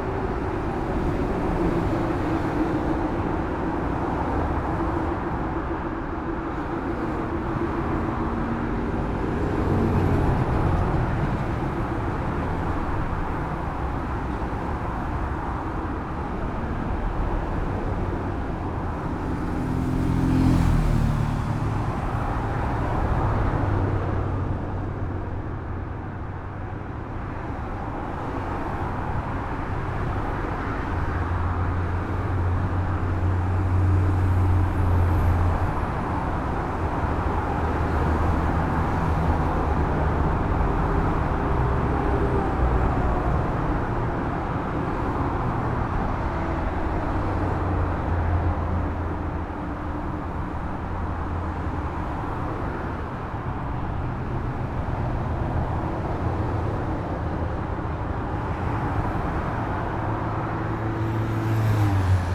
Motorway Bridge, Athens, Greece - Pedestrian Bridge over Motorway
Standing in the centre of the motorway pedestrian bridge, inbetween the two directions of travel directly below, at evening rush hour. Heavy traffic in one direction (out of Athens) and lighter traffic in the other (towards Athens). DPA4060 to Tascam HDP-1.